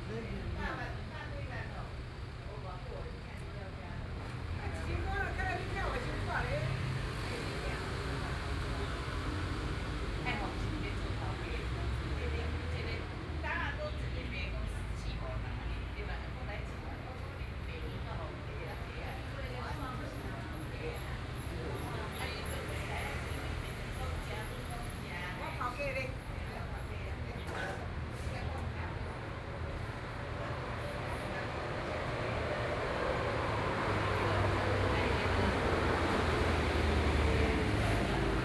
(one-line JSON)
{"title": "JinZhou Park, Taipei City - Morning in the park", "date": "2014-02-27 08:06:00", "description": "Morning in the park, Traffic Sound, Environmental sounds, Birdsong, A group of elderly people chatting\nBinaural recordings", "latitude": "25.06", "longitude": "121.53", "timezone": "Asia/Taipei"}